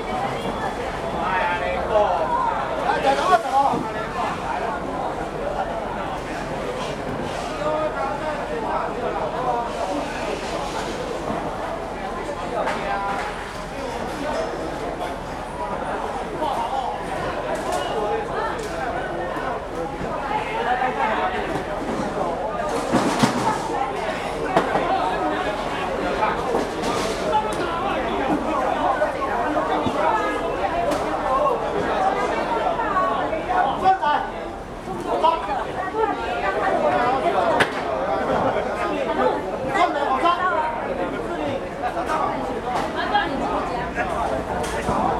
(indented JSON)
{
  "title": "三重果菜市場, New Taipei City, Taiwan - wholesale market",
  "date": "2012-03-08 06:05:00",
  "description": "Fruits and vegetables wholesale market\nSony Hi-MD MZ-RH1 +Sony ECM-MS907",
  "latitude": "25.07",
  "longitude": "121.49",
  "altitude": "6",
  "timezone": "Asia/Taipei"
}